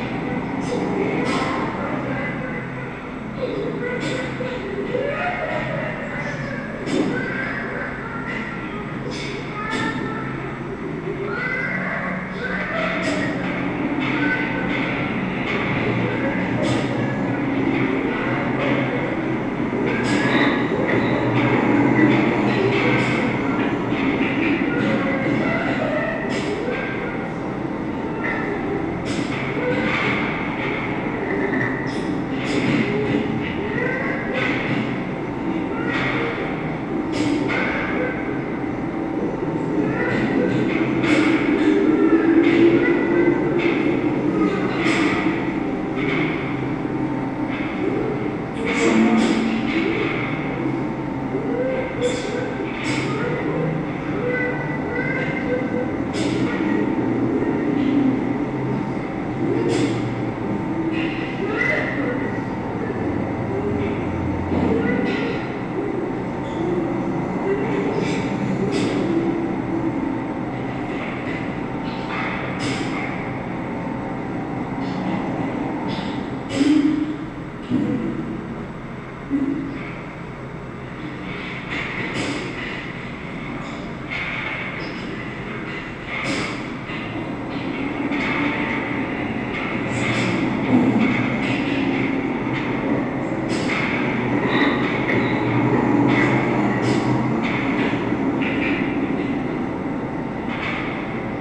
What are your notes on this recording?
Inside the Juli Stoschek Collection building at the basement floor in a corridor with video works during the exhibition - number six: flaming creatures. The sound of six different performance videos presented parallel on screens in a narrow, dark corridor. This recording is part of the exhibition project - sonic states, soundmap nrw - sonic states, social ambiences, art places and topographic field recordings